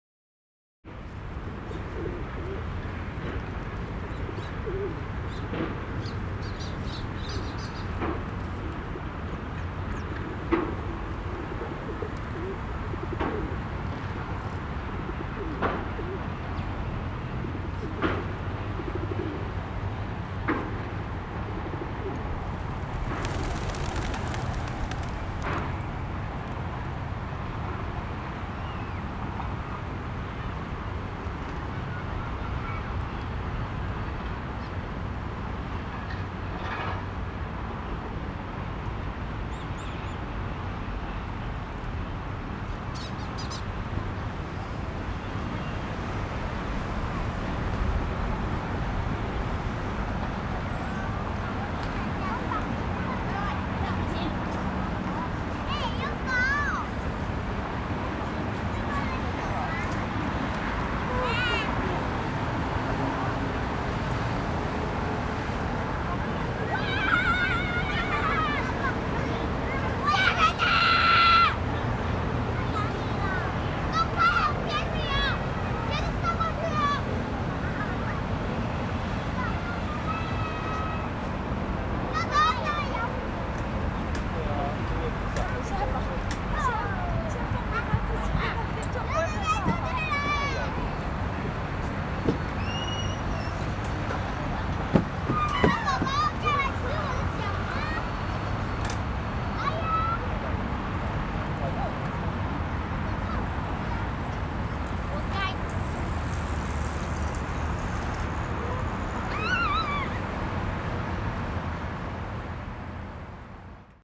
Yonghe Dist., New Taipei City, Taiwan - In Riverside Park
In Riverside Park, Child, Pigeon, Traffic Sound
Rode NT4+Zoom H4n